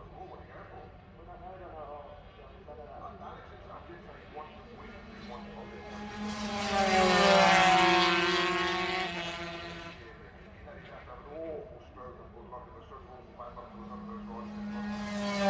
british motorcycle grand prix 2006 ... 125 warm up ... one point stereo mic to mini disk ... commentary ...
East Midlands, England, United Kingdom, 2 July 2006